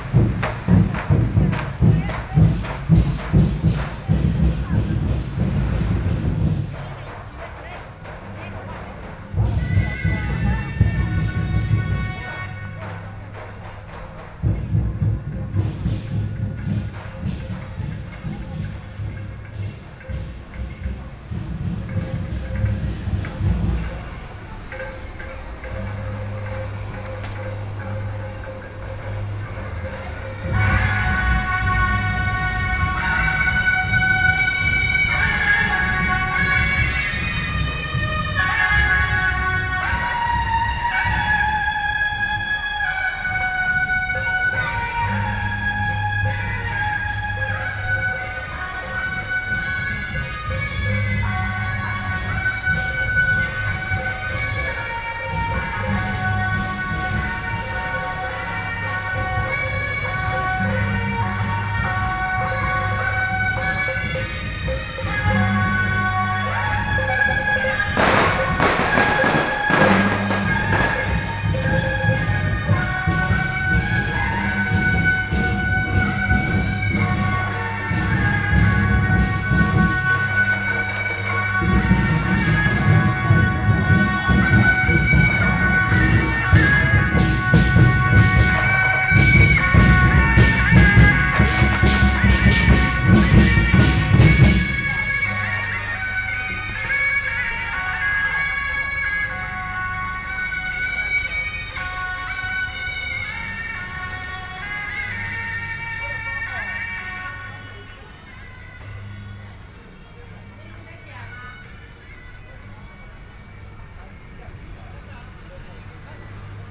Luzhou, New Taipei City - walking in the Street
Traditional temple Festival, Traffic Noise, Binaural recordings, Sony PCM D50 + Soundman OKM II